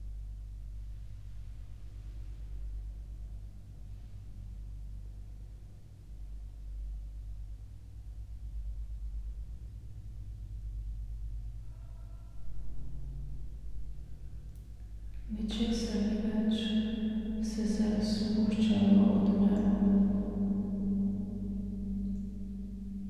chamber cistern, wine cellar, Maribor - echo, silence, words
wine cistern from 1888, chamber size, vaulted, all covered with glass tiles